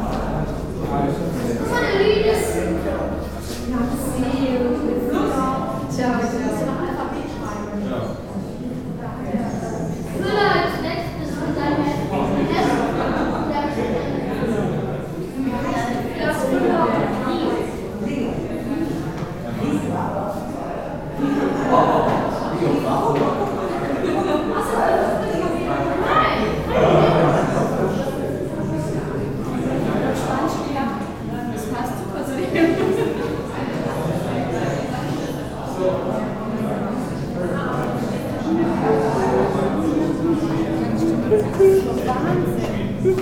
cologne, komödienstraße, galerie schmidla, vernissage
ambiente zur eröffnungsvernissage der galerie schmidla, gesprächsfetzen von gästen, schritte, vermischt mit den klängen einer videoinstallation von egbert mittelstädt
soundmap nrw - social ambiences - sound in public spaces - in & outdoor nearfield recordings